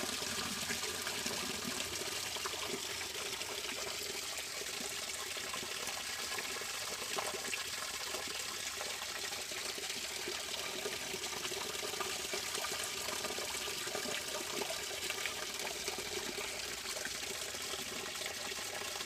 Berkeley - stream above the Woodbridge trail running into a Strawberry creek
stream above a Woodbridge trail running into a Strawberry creek
Alameda County, California, United States of America, 25 March 2010